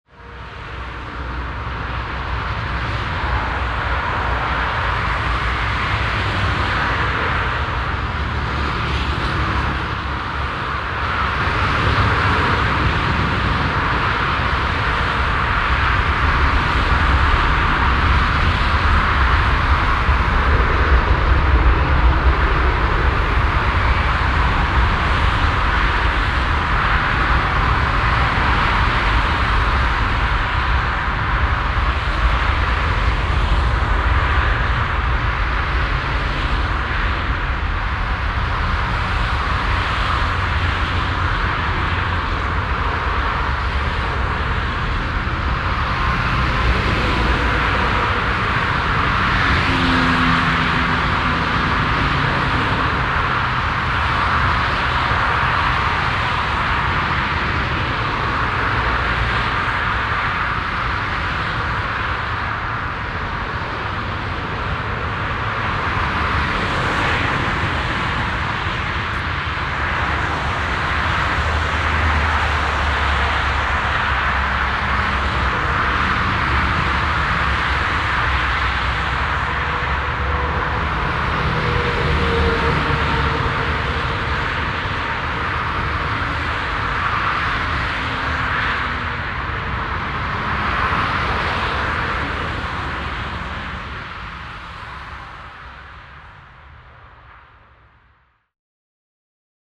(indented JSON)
{
  "title": "ratingen, autobahn A52. höhe kaiserswerther str",
  "description": "verkehrsgeräusche der A52, nachmittags\nfrühjahr 2007\nsoundmap nrw:\nsocial ambiences/ listen to the people - in & outdoor nearfield recordings",
  "latitude": "51.30",
  "longitude": "6.81",
  "altitude": "45",
  "timezone": "GMT+1"
}